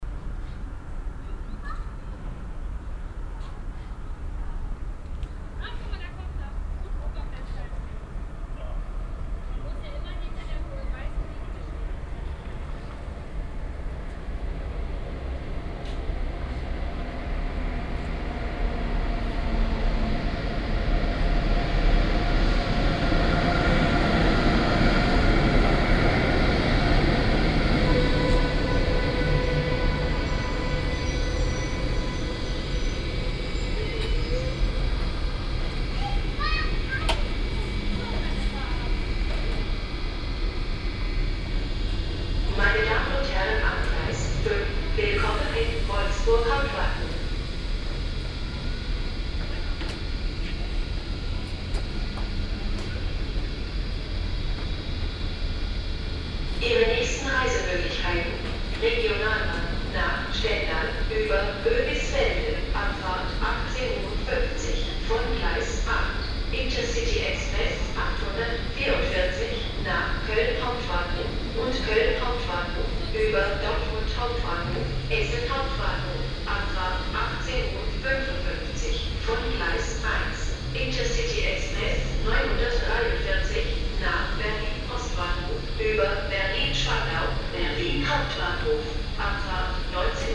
wolfsburg, hauptbahnhof, gleis 1
zugeinfahrt, lautsprecheransage, zugabfahrt, abends
soundmap nrw
- social ambiences, topographic field recordings